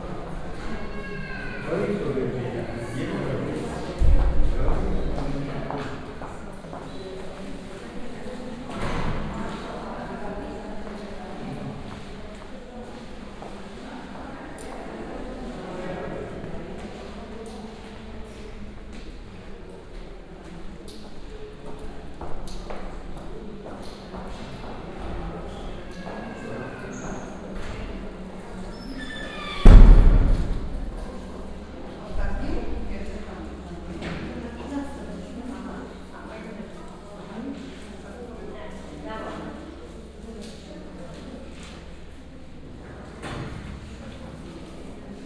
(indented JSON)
{
  "title": "Poznan, Sobieskiego housing complex - clinic",
  "date": "2019-09-16 12:58:00",
  "description": "(binaural recording) recorded inside a clinic. patients talking with each other, phone ringing at the reception. crying children as there is a separate department for treating their diseases. doctors leaving their offices, slamming and locking the doors. it's a big empty space with a few benches, thus the specious reverberation. (roland r-07 + luhd PM-01 bins)",
  "latitude": "52.46",
  "longitude": "16.90",
  "altitude": "97",
  "timezone": "Europe/Warsaw"
}